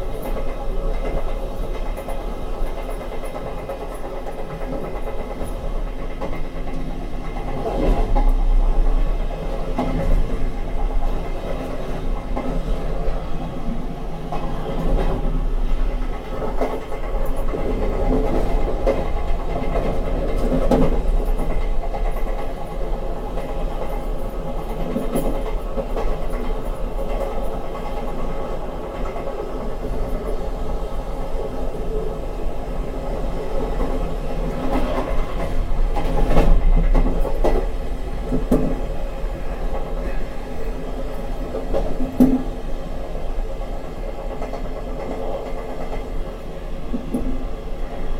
on the train munich to zurich, near memmingen
recorded june 7, 2008. - project: "hasenbrot - a private sound diary"
Germany